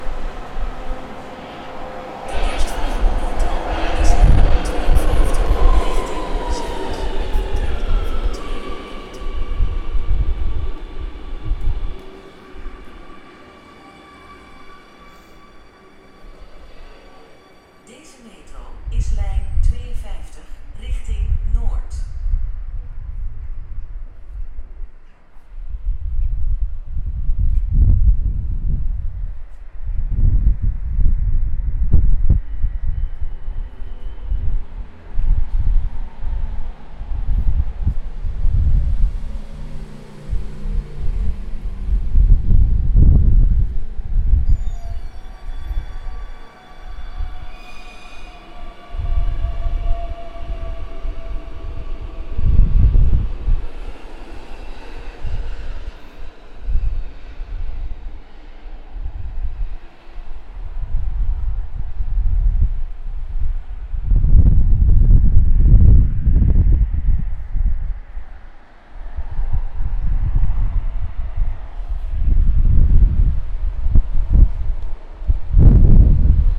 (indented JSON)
{
  "title": "Amsterdam, Noorderpark, Amsterdam, Nederland - Wasted Sound Metro Station",
  "date": "2019-10-30 10:46:00",
  "description": "Wasted Sound from the metro station Noorderpark.",
  "latitude": "52.39",
  "longitude": "4.92",
  "altitude": "2",
  "timezone": "Europe/Amsterdam"
}